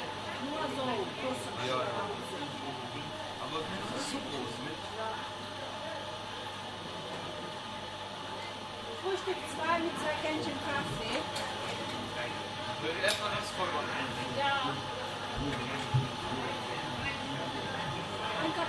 wülfrath, stadtcafe, cafe wülfrath - wuelfrath, stadtcafe, cafe wuelfrath
morgens im frühjahr 07, ausschankbetrieb
stimmengewirr, geschirr
project: :resonanzen - neanderland soundmap nrw: social ambiences/ listen to the people - in & outdoor nearfield recordingss